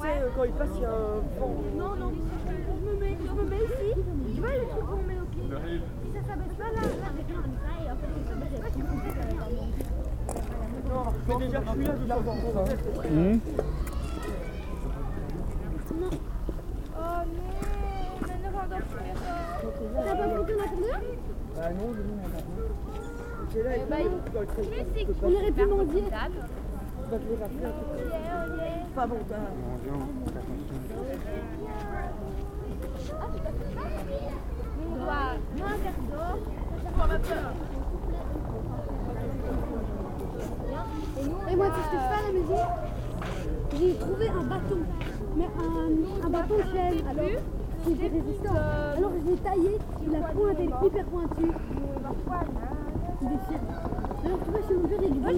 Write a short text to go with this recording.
After a schoolday, the train is arriving in Court-St-Etienne station. It's a "Desiro", a new train, running here since a few monthes. Map location is into a no man's land. That's normal. There's a new platform here (finished in mid-2014.